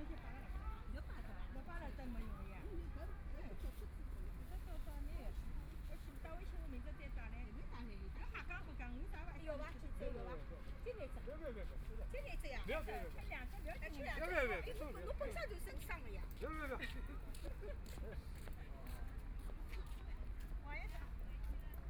Huangxing Park, Shanghai - Shuttlecock
A group of old people are shuttlecock, Binaural recording, Zoom H6+ Soundman OKM II
Shanghai, China, 22 November 2013, 16:57